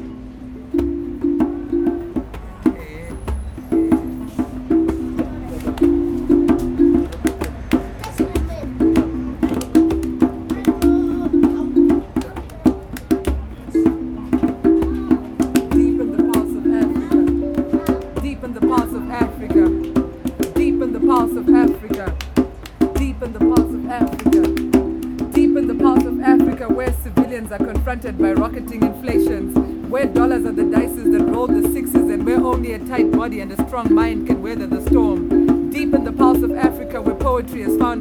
Mufakose, Harare, Zimbabwe - Blackheat jamming in Mufakosi...
We are sitting a long morning under a tree in a garden in Mufakosi township of Harare. You can hear the traffic of one of the main Through-roads just behind the garden-hedge. Blackheat DeShanti is jamming away with her band… children and neighbors drop buy and linger… “Deep in the Pulse of Africa…”